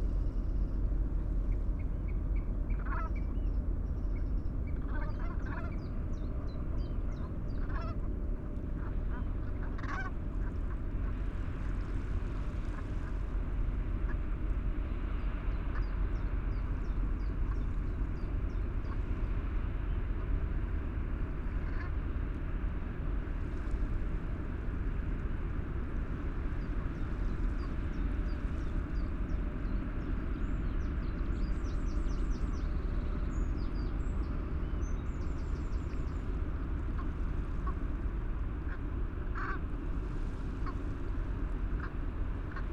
West Wittering, UK - ships foghorn ... brent geese ...
Ship's fog horn ... ship entering Southampton waters ...birds calling ... brent geese ... chiffchaff ... oystercatcher ... wren ... love the decay of the sound ... parabolic ...
Hayling Island, UK, 1 April, 07:51